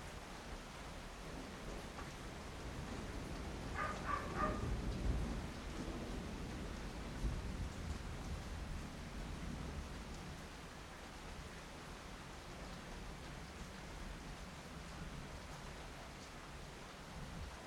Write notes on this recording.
captured from my bedroom window at dawn; rain, thunder, bark... equipment used: Sony MZ-R70 and ECM-MS907.